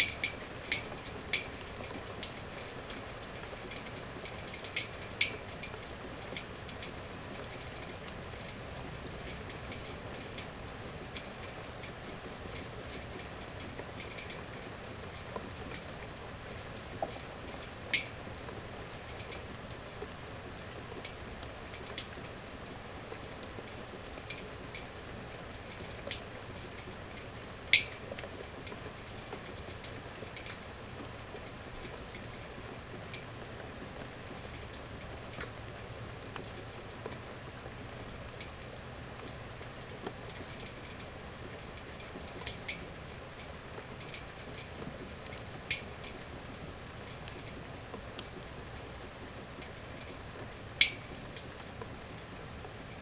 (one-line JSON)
{"title": "Asker, Norway, rain on metal", "date": "2013-08-12 15:15:00", "latitude": "59.87", "longitude": "10.50", "altitude": "26", "timezone": "Europe/Oslo"}